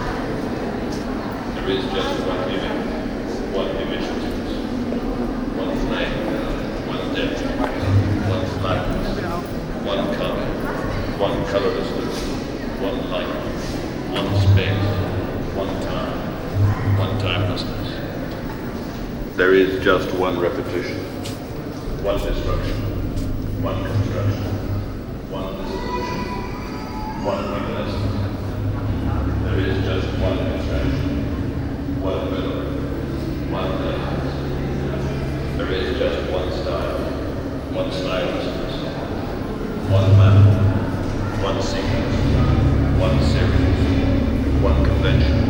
in der kirche, abends - präsentation von khm studenten arbeiten, altitude 08
soundmap nrw: social ambiences/ listen to the people - in & outdoor nearfield recordings
17 July, 14:08